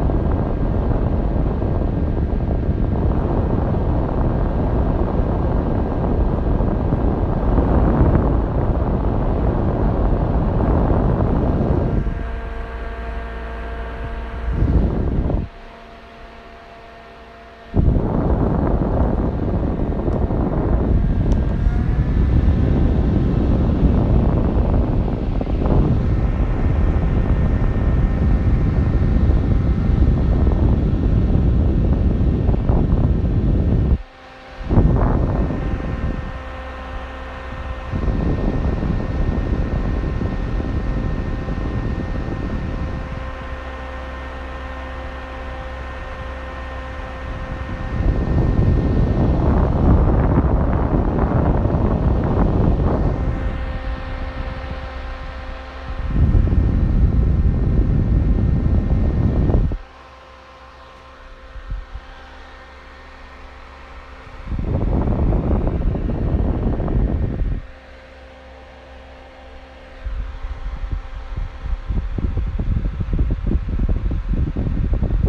{"title": "a, Cl., Medellín, Antioquia, Colombia - Ambiente Ducto de Ventilación", "date": "2021-10-29 02:07:00", "description": "Información Geoespacial\n(latitud: 6.234335, longitud: -75.584978)\nVentilación Edificio Ocarinas\nDescripción\nSonido Tónico: Ducto Ventilando\nSeñal Sonora: Cambios de Frecuencia en la Ventilación\nMicrófono dinámico (celular)\nAltura: 2,25 m\nDuración: 3:02\nLuis Miguel Henao\nDaniel Zuluaga", "latitude": "6.23", "longitude": "-75.58", "altitude": "1486", "timezone": "America/Bogota"}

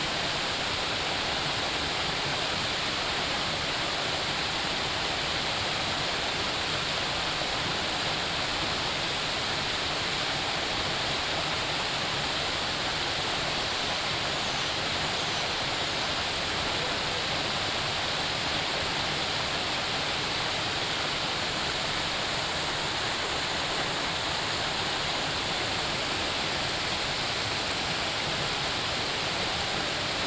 {"title": "五峰旗瀑布, 礁溪鄉大忠村, Yilan County - waterfall and stream sound", "date": "2016-11-18 10:53:00", "description": "waterfall, stream sound, Tourists", "latitude": "24.83", "longitude": "121.75", "altitude": "145", "timezone": "Asia/Taipei"}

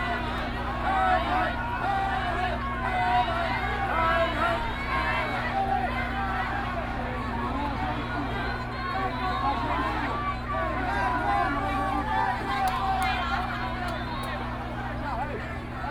{"title": "Jinan Rd, Taipei City - Nonviolent occupation", "date": "2013-08-18 22:42:00", "description": "Nonviolent occupation, To protest the government's dereliction of duty and destruction of human rights, Zoom H4n+ Soundman OKM II", "latitude": "25.04", "longitude": "121.52", "altitude": "11", "timezone": "Asia/Taipei"}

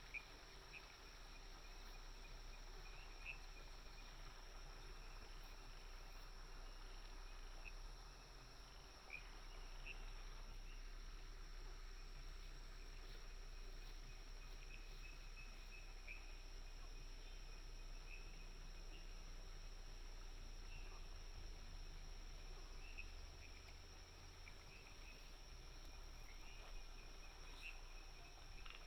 {"title": "魚池鄉五城村, Nantou County - walk in the mountain", "date": "2015-04-29 20:29:00", "description": "Night walk in the mountain, Frog sounds, Firefly", "latitude": "23.93", "longitude": "120.90", "altitude": "756", "timezone": "Asia/Taipei"}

